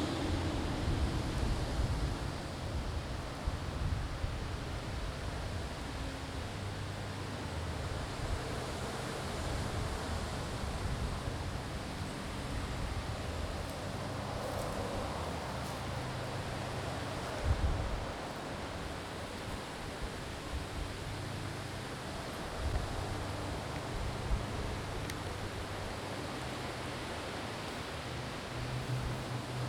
Sulphur Beach Reserve (Low Tide)
Walk along Sulphur Beach reserve at low tide
Auckland, New Zealand